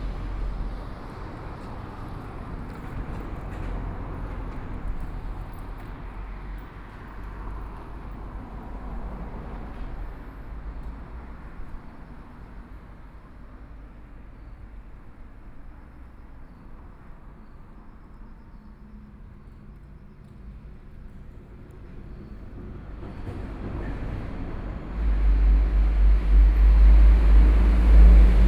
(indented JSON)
{
  "title": "五結鄉鎮安村, Yilan County - Below the railroad tracks",
  "date": "2014-07-27 14:04:00",
  "description": "Below the railroad tracks, Hot weather, Traffic Sound\nSony PCM D50+ Soundman OKM II",
  "latitude": "24.71",
  "longitude": "121.77",
  "altitude": "9",
  "timezone": "Asia/Taipei"
}